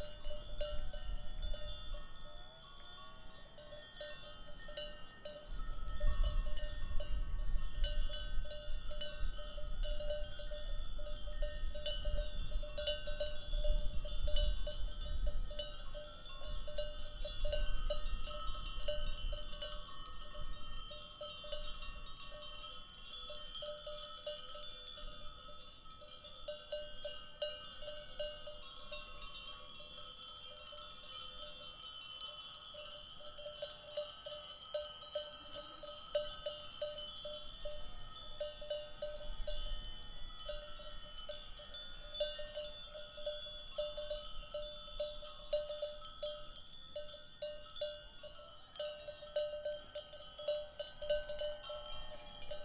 Monfurado, Évora, Portugal - Sheep
Sheep grazing in a beautiful July afternoon in Monfurado
Alentejo Central, Alentejo, Portugal